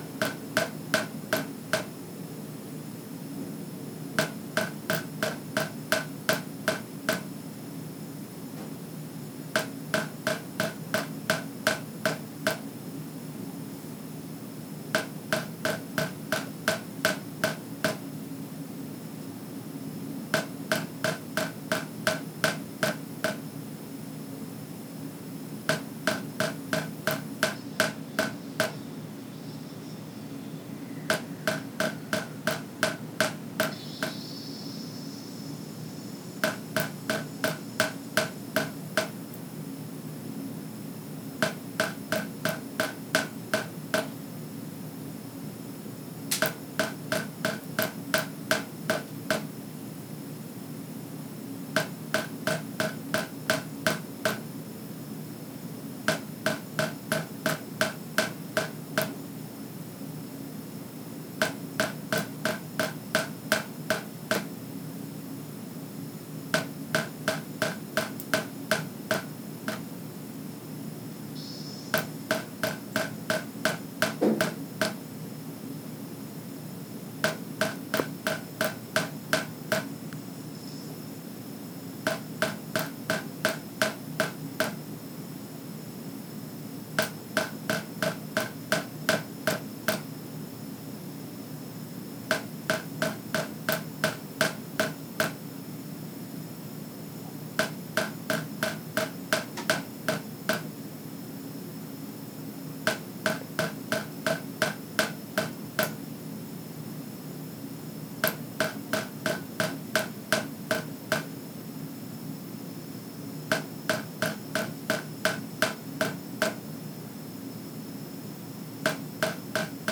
Pensione Popolo, Montreal, QC, Canada - Late night tap drip at Pensione Popolo
Just a tap dripping into the kitchen sink in Pensione Popolo's large suite.
1 January 2012, 23:30